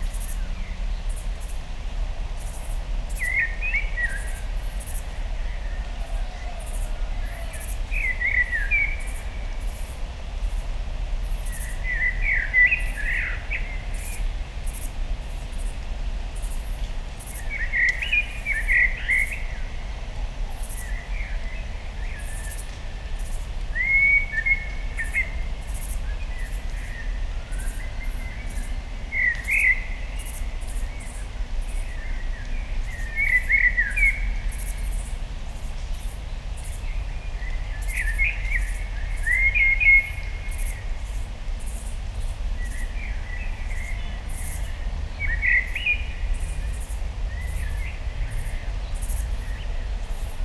great song of a blackbird early morning
zoom f4 and array-board with Pui5024 electret capsules
Mecklenburg-Vorpommern, Deutschland, August 2021